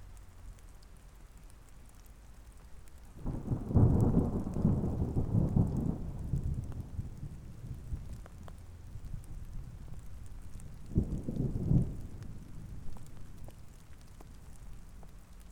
Cabris - Thunder, Cabris
A storm approaches Cabris
13 September 2009